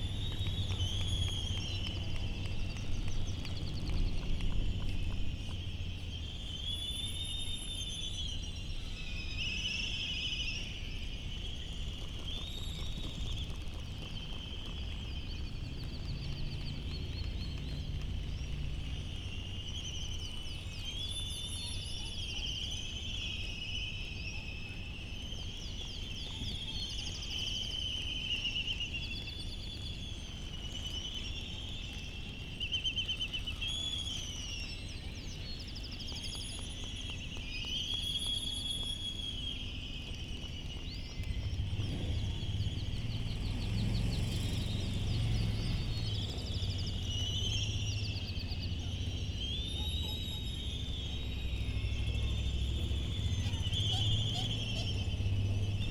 United States Minor Outlying Islands - Laysan albatross soundscape ...
Laysan albatross soundscape ... Sand Island ... Midway Atoll ... bird calls ... laysan albatross ... canaries ... bristle-thighed curlew ... open lavalier mics on mini tripod ... background noise ... Midway traffic ... handling noise ... some windblast ...
15 March